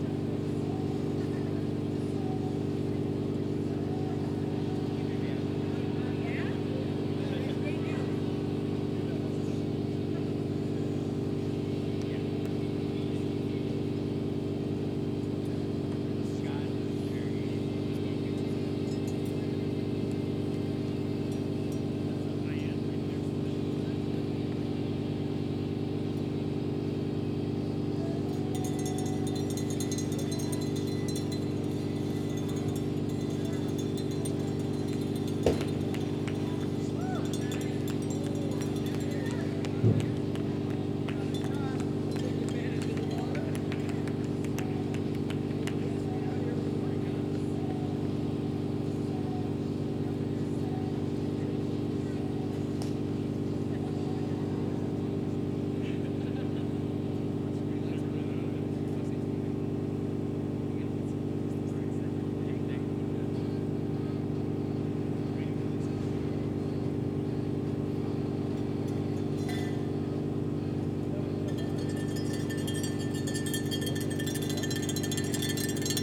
Zumbro River Bottoms - Zumbro Ultra Marathon
Sounds of Aid Station at the Zumbro Ultra Marathon. The Zumbro Ultra Marathon is a 100 mile, 50 mile, 34 mile, and 17 mile trail race held every year at the Zumbro River Bottoms Management area.
Recorded with a Zoom H5
Minnesota, United States, 9 April